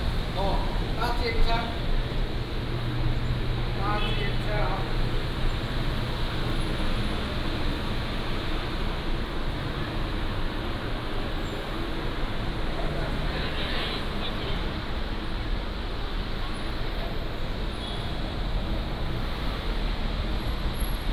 THSR Tainan Station, Guiren District - Walking through the station
Walking through the station
Guiren District, Tainan City, Taiwan